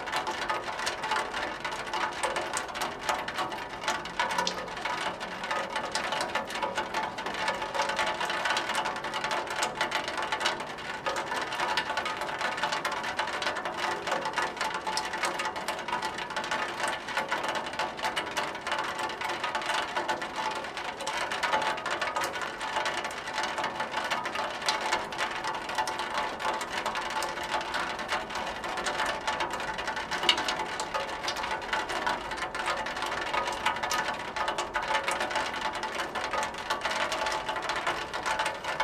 Ленинский пр-т., Москва, Россия - In the yard
In the yard. You can hear water running down the drainpipe and then dripping onto the metal roof of the visor. Snow melts, drops, warm winter. Evening.
Центральный федеральный округ, Россия, 30 January 2020